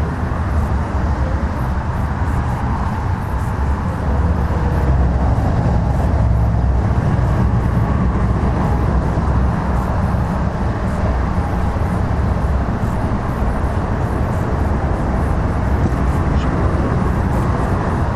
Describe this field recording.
ambience nature vs. the new S2 motorway